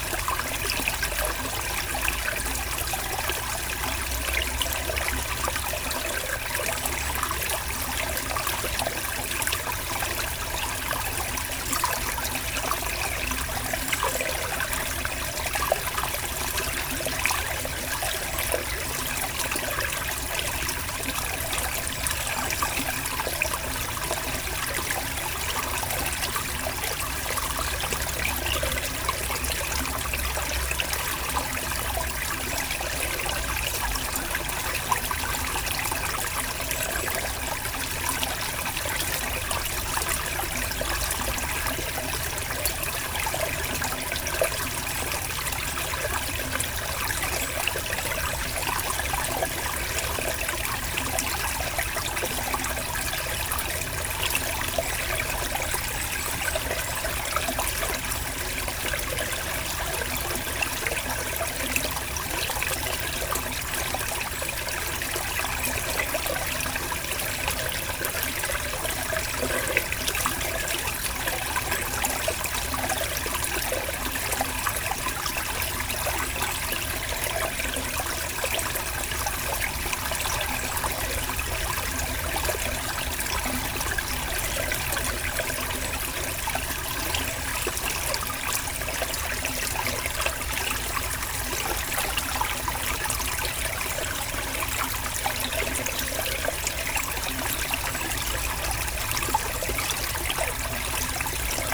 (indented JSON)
{
  "title": "Court-St.-Étienne, Belgique - Ry de Beaurieux river",
  "date": "2016-08-26 08:20:00",
  "description": "The Ry de Beaurieux is a small stream flowing behind the houses. Access to this river is difficult.",
  "latitude": "50.64",
  "longitude": "4.60",
  "altitude": "77",
  "timezone": "Europe/Brussels"
}